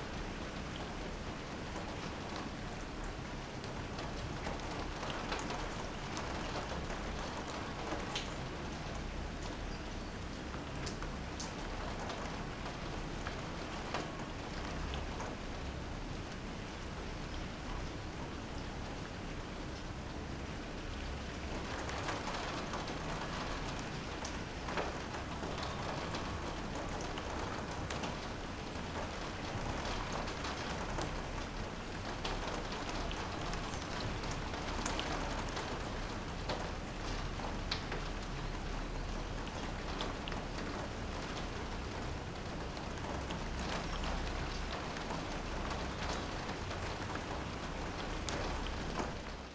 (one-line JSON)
{"title": "stromboli, ginostra - rain and wind", "date": "2009-11-05 11:28:00", "description": "rainy day, aggressive sirocco winds tortouring nerves", "latitude": "38.79", "longitude": "15.19", "altitude": "94", "timezone": "Europe/Rome"}